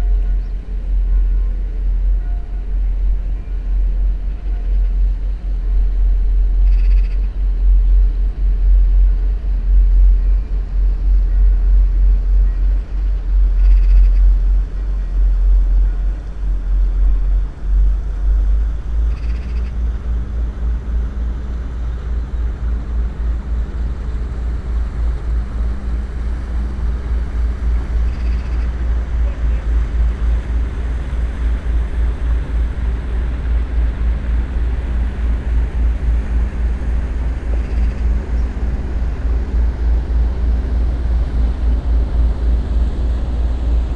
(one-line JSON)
{"title": "Saint-Pierre-la-Garenne, France - Boat", "date": "2016-09-21 19:00:00", "description": "A tourist boat is passing by on the Seine river. It's the Nicko cruises, transporting german people.", "latitude": "49.16", "longitude": "1.39", "altitude": "13", "timezone": "Europe/Paris"}